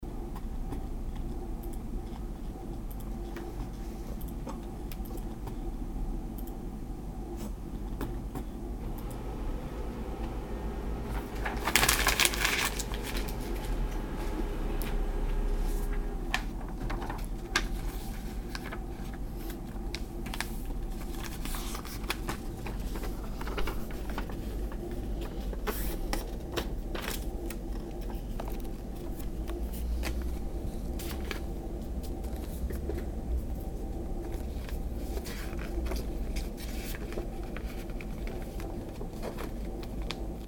atmosphäre im reisebüro, nachmittags - tastaturklicken, papierrascheln, druckergeräusche
soundmap nrw: social ambiences/ listen to the people - in & outdoor nearfield recordings